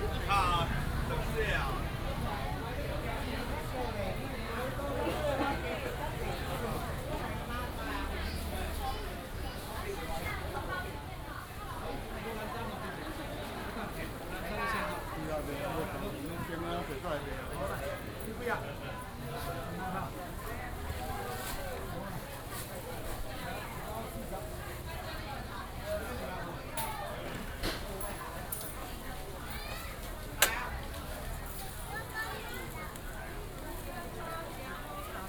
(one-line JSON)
{"title": "豐原第一零售市場, Fengyuan Dist., Taichung City - Very large indoor market", "date": "2017-01-22 11:44:00", "description": "Very large indoor market, Market cries", "latitude": "24.25", "longitude": "120.72", "altitude": "221", "timezone": "GMT+1"}